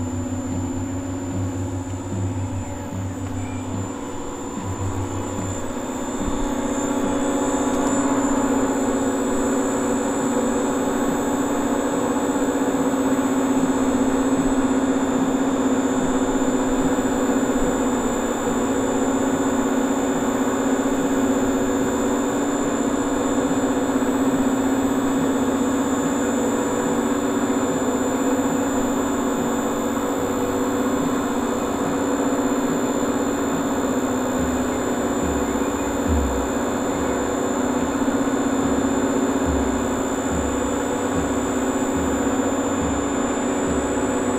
Gießen, Deutschland - Suberran Landesgartenschau
A little terrace at the lake side, people sitting there under the trees and a penetrating subterran buzz filled the air. Two of them sat in wheelchairs and I wondered whether is is a good place at the moment to "park". The sound came from under a metal plate (?) in the ground and I thought it might have something to do with canalisation/water filters/pumps connected to the lake. Recorded with a ZoomH4n.